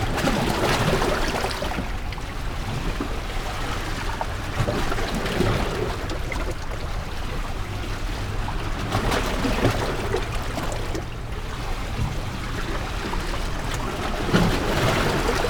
Fort St Jean, Prom. Louis Brauquier, Marseille - sea flux